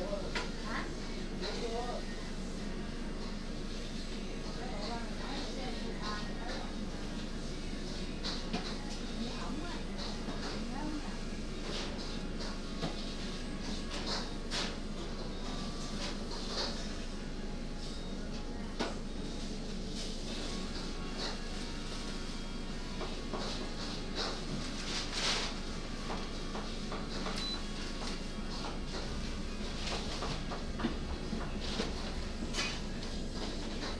Wayne, Indianapolis, IN, USA - Chinese Restaurant
Binaural recording inside a Chinese restaurant.
16 January 2017